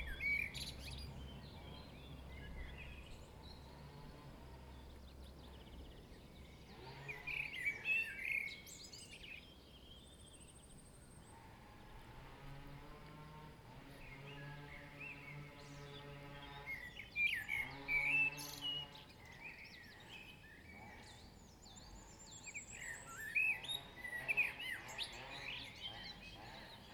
{"title": "Chem. de Molle, Le Chambon-sur-Lignon, France - Around the pond", "date": "2022-05-14 14:00:00", "description": "Nice pond in this village, sounds of nature. Recorded with a Zoom h2n.", "latitude": "45.06", "longitude": "4.31", "altitude": "992", "timezone": "Europe/Paris"}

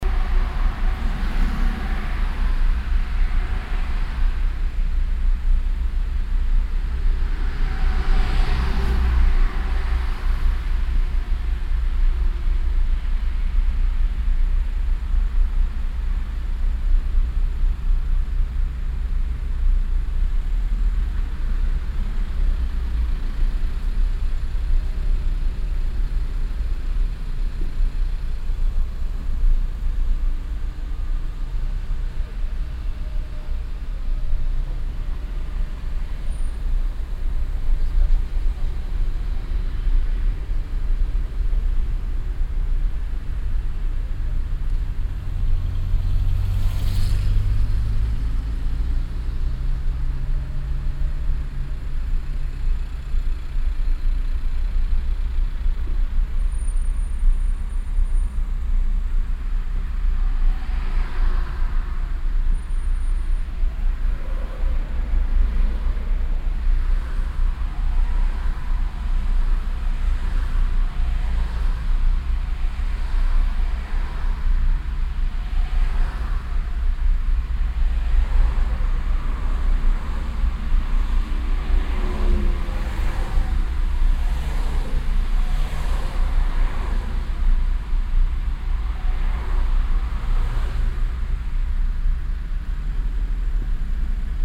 {"title": "cologne, autobahnabfahrt - innere kanalstrasse, im verkehr", "date": "2008-08-27 21:32:00", "description": "abfahrt von der a 57 nach köln nord - stauverkehr vor der ampel - nachmittags - anfahrt auf innere kanal straße - parallel stadtauswärts fahrende fahrzeuge - streckenaufnahme teil 03\nsoundmap nrw: social ambiences/ listen to the people - in & outdoor nearfield recordings", "latitude": "50.95", "longitude": "6.94", "altitude": "53", "timezone": "Europe/Berlin"}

